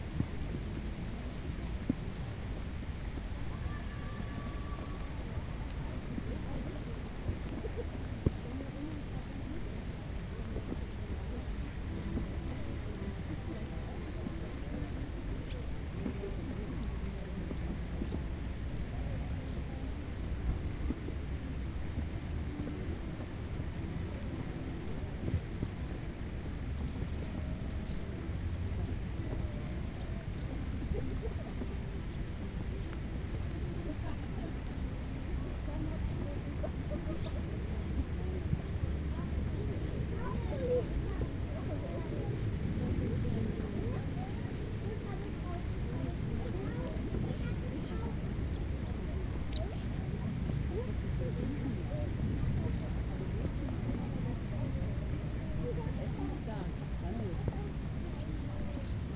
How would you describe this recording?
recorder in the rain, someone plays an accordion, the city, the country & me: september 4, 2010